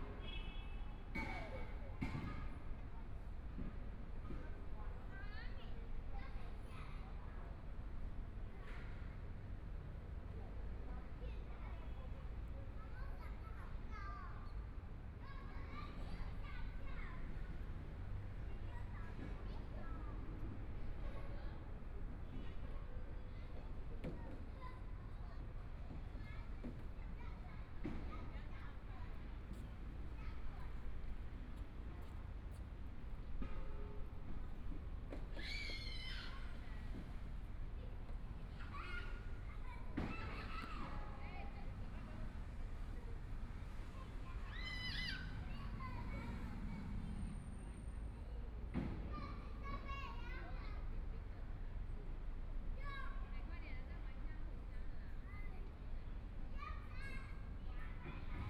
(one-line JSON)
{"title": "Shuangcheng St., Taipei City - Kids in the park", "date": "2014-02-10 15:18:00", "description": "Kids in the park, Discharge (Gas barrel), Clammy cloudy, Binaural recordings, Zoom H4n+ Soundman OKM II", "latitude": "25.06", "longitude": "121.52", "timezone": "Asia/Taipei"}